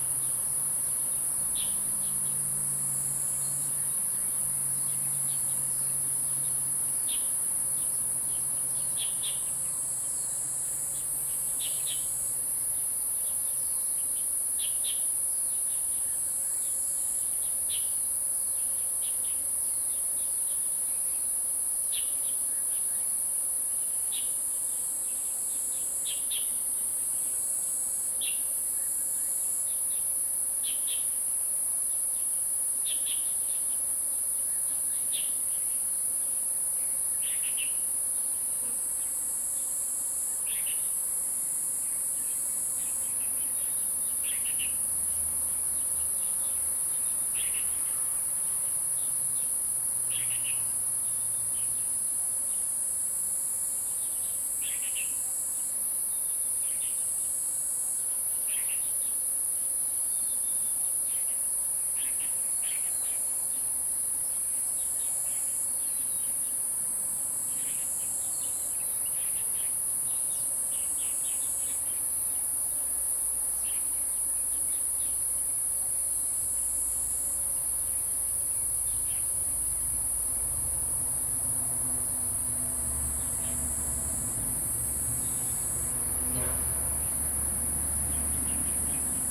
埔里鎮桃米里, Nantou County, Taiwan - Beneath fruit trees
Birds singing, Insect sounds, Bee's voice
Zoom H2n MS+XY
12 August 2015, ~06:00